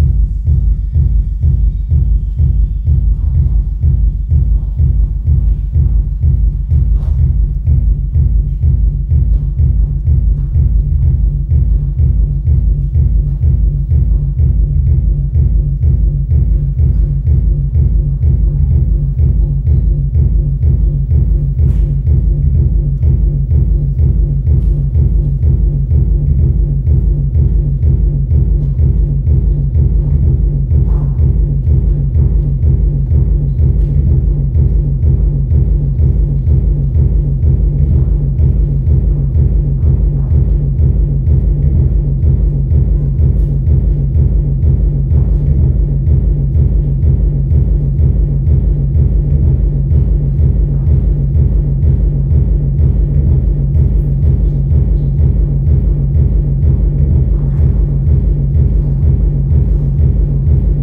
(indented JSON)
{
  "title": "bonn, frongasse, theaterimballsaal, bühnenmusik killer loop - bonn, frongasse, theaterimballsaal, puls und lachen",
  "description": "soundmap nrw - social ambiences - sound in public spaces - in & outdoor nearfield recordings",
  "latitude": "50.73",
  "longitude": "7.07",
  "altitude": "68",
  "timezone": "GMT+1"
}